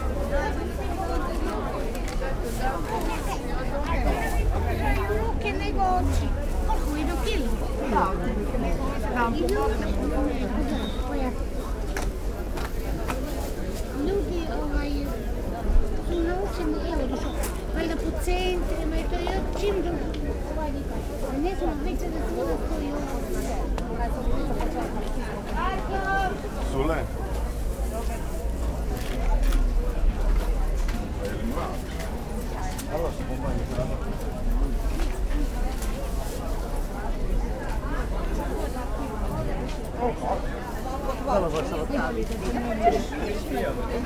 Narodni trg, Pula, Chorwacja - marketplace
produce market in Pula. place bustling with customers and vendors. (roland r-07)
Istarska županija, Hrvatska, 10 September 2021, ~12:00